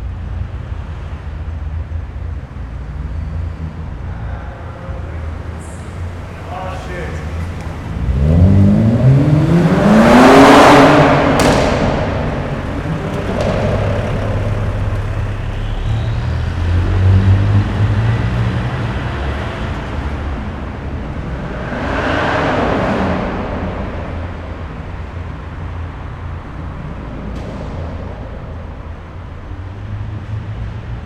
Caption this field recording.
Late at night, some vehicles are running up and down the parking garage, revving their engines. Zoom H5 with default X/Y capsule.